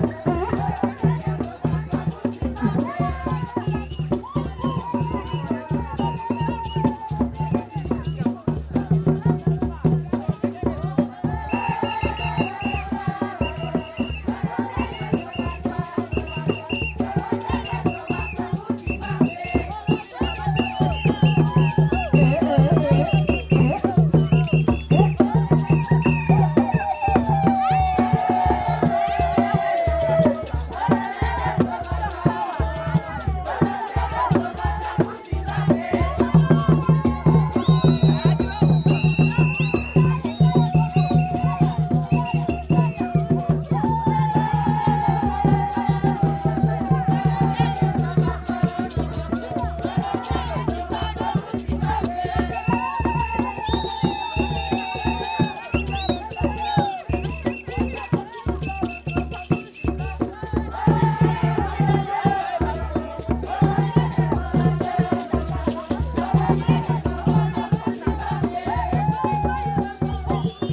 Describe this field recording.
recordings from the first public celebration of International Women’s Day at Binga’s urban centre convened by the Ministry of Women Affairs Zimbabwe